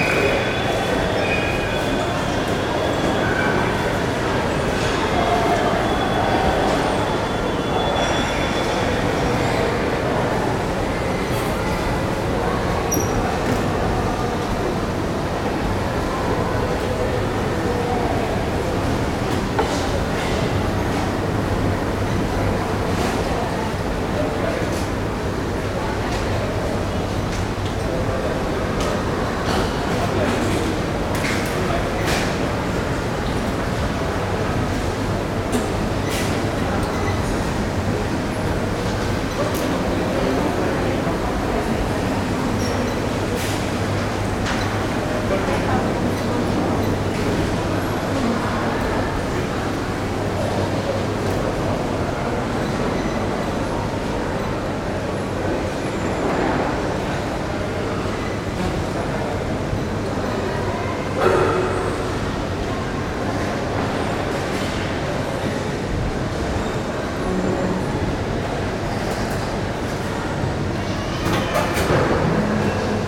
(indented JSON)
{
  "title": "ONE MALL، Wadi Al Shaheeniya St, Doha, Qatar - 01 Mall, Qatar",
  "date": "2020-02-28 16:36:00",
  "description": "One of a series of sound walks through Qatar's ubiquitous shopping malls",
  "latitude": "25.23",
  "longitude": "51.48",
  "altitude": "18",
  "timezone": "Asia/Qatar"
}